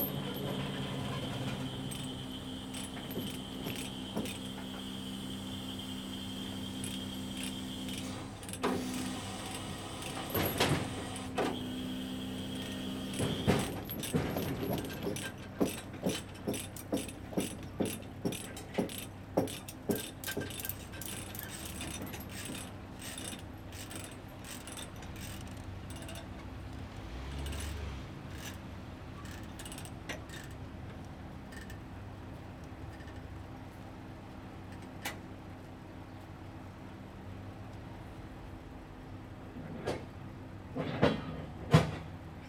Building site Gartenstraße, Berlin - pulling tight the asphalt milling machine on a truck [I used the Hi-MD-recorder Sony MZ-NH900 with external microphone Beyerdynamic MCE 82]
Berlin, Germany, 21 June 2011